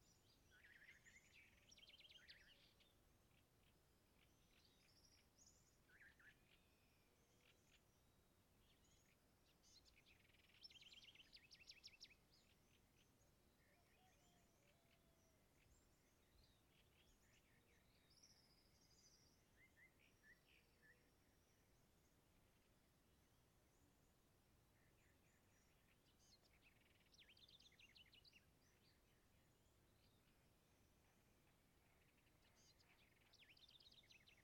{
  "title": "Apulo, Cundinamarca, Colombia - Singing Birds",
  "date": "2013-01-03 06:15:00",
  "description": "Bird songs during the sunrising. Zoom H2N in XY function at ground level. The recording was taken on Apulo's rural area.",
  "latitude": "4.52",
  "longitude": "-74.58",
  "timezone": "America/Bogota"
}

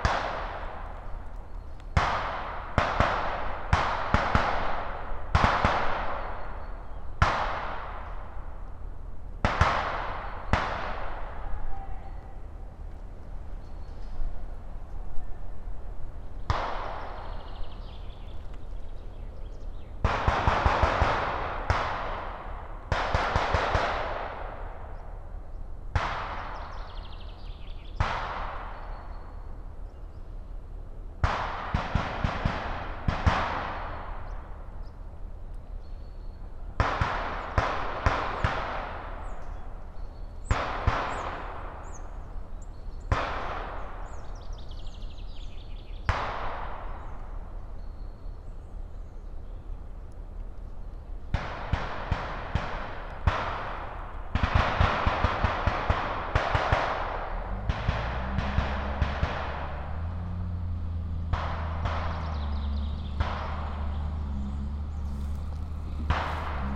{
  "title": "Paldiski linn, Harju maakond, Estonia - Military exercises near Paldiski",
  "date": "2016-04-28 11:00:00",
  "description": "Military training exercises near Paldiski town.",
  "latitude": "59.37",
  "longitude": "24.11",
  "altitude": "24",
  "timezone": "Europe/Tallinn"
}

